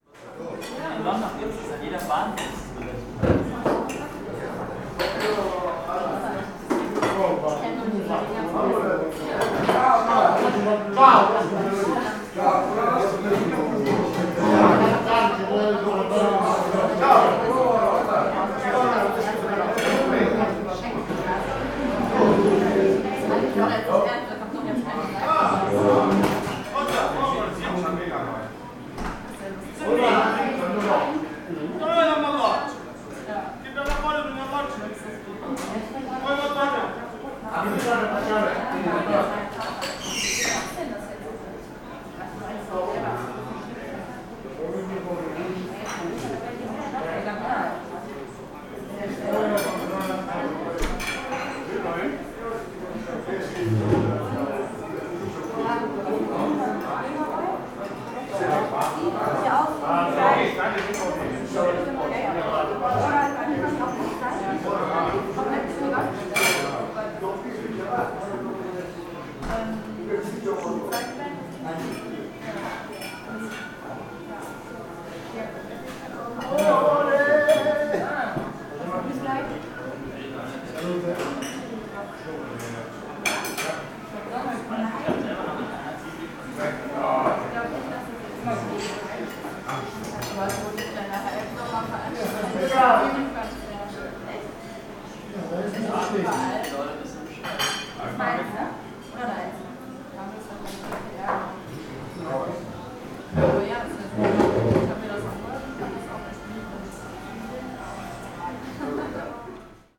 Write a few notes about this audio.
trattoria celentano, spaghetti carbonara, re-opening after renovation. prices are still ok.